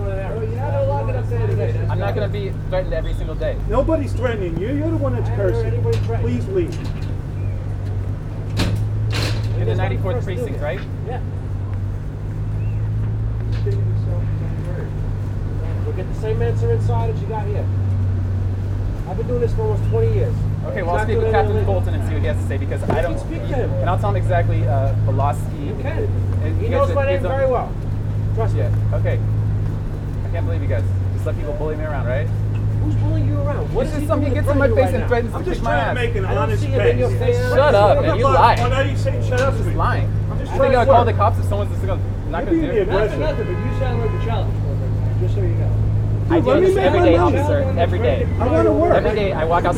Brooklyn, Bedford Avenue.
A conversation about a bike parking spot.
By JM Charcot.
Brooklyn, NY, USA, 5 September 2010